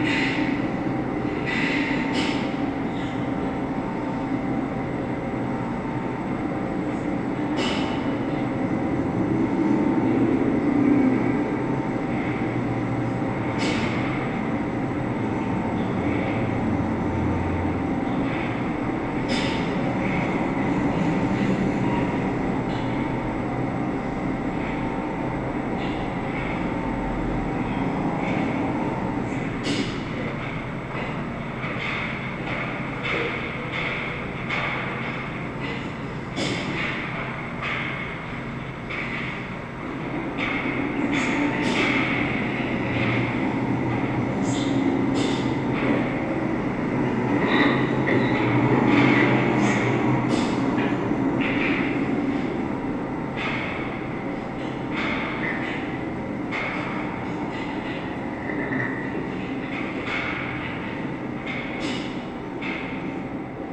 Inside the Juli Stoschek Collection building at the basement floor in a corridor with video works during the exhibition - number six: flaming creatures.
The sound of six different performance videos presented parallel on screens in a narrow, dark corridor.
This recording is part of the exhibition project - sonic states
soundmap nrw - sonic states, social ambiences, art places and topographic field recordings